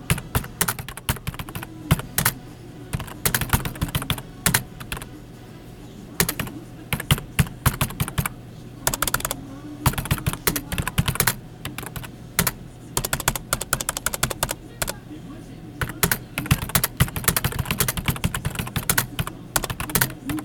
Saint-Nazaire, France - La Tribu par Céleste
Le son des claviers représente pour moi l'ambiance de la Tribu. Une ambiance studieuse ! Céleste, Radio La Tribu.